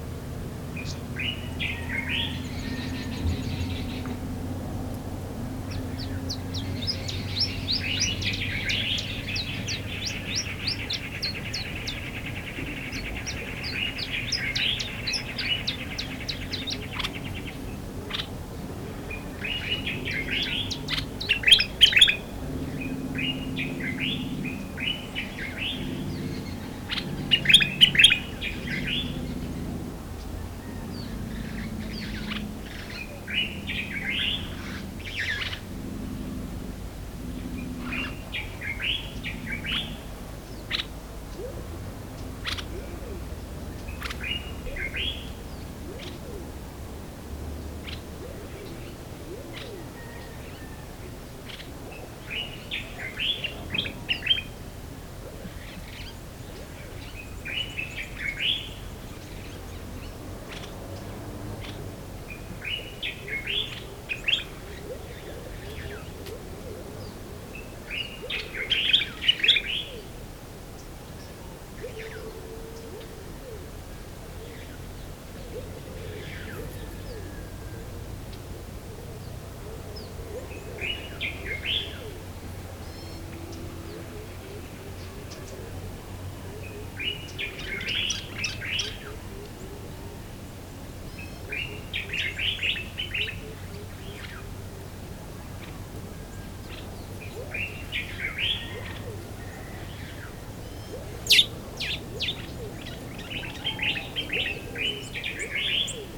markala morning birds along the river Niger, between some gardens.